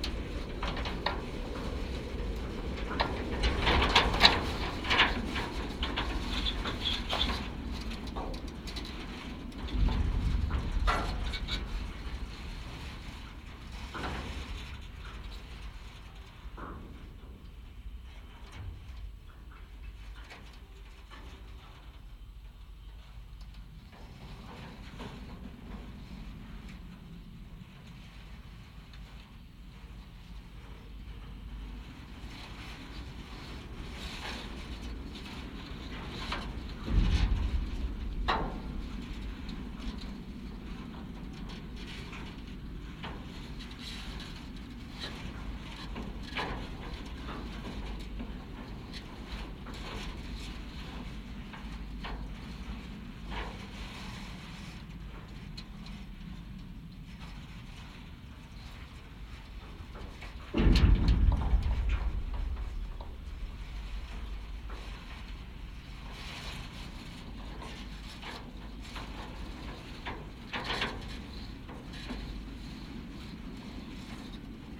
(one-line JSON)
{"title": "Drobės g., Kaunas, Lithuania - Soccer field safety net", "date": "2021-04-22 16:20:00", "description": "4 channel contact microphone recording of a soccer field safety net. Irregular impact of the wind moves the net and it's support poles, resulting in rustling and metallic sounds. Recorded with ZOOM H5.", "latitude": "54.87", "longitude": "23.94", "altitude": "31", "timezone": "Europe/Vilnius"}